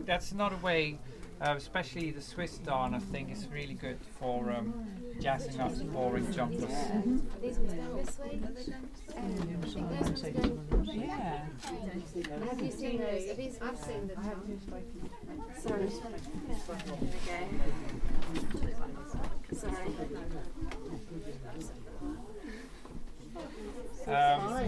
This is the sound of the wonderful Tom van Deijnen AKA Tom of Holland introducing his darning masterclass during Shetland Wool Week 2013. Tom is an exceedingly talented mender of clothes as well as a superb knitter. Meticulous in detail and creative with his ideas, his philosophy on mending clothes is both imaginative and practical. I love this introduction at the start of his class, where you can clearly hear how impressed everyone in attendance is to see Tom's wonderful examples of mended and hand-knitted clothes, and you can also hear some of the busyness and atmosphere in the Jamieson & Smith wool shop during Wool Week - the frequency of the chimes on the door jangling every few seconds signify the huge numbers of folk coming in and out to buy yarn! Listen out for "oohs" and "aahs" as Tom produces his textiles for people to see.